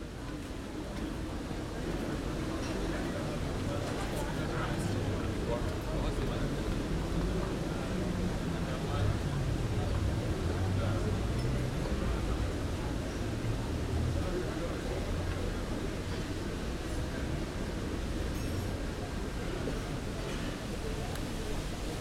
Pl. des Terreaux, Lyon, France - Place des Terreaux
Lace des Terreaux la fontaine les passants...
30 September, 13:10